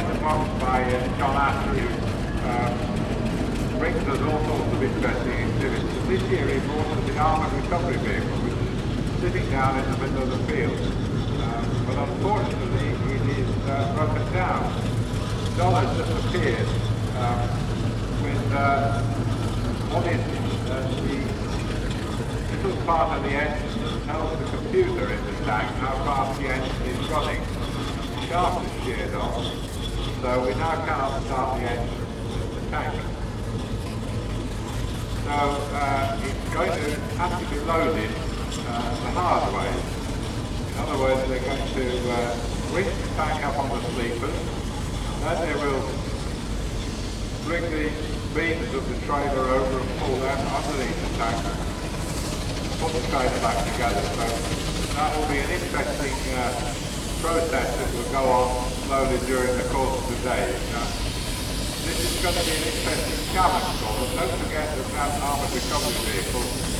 The Great Dorset Steam Fair, Dairy House Farm, Child Okeford, Dorset - Steam contraptions parading with commentary
(location might be slightly wrong) The Great Dorset Steam Fair is unbelievably big. There are hundreds and hundreds of steam things in what seems like a temporary town across many fields. In this recording, engines and steam contraptions of all kinds parade around a big field as a dude commentates through the tannoy system.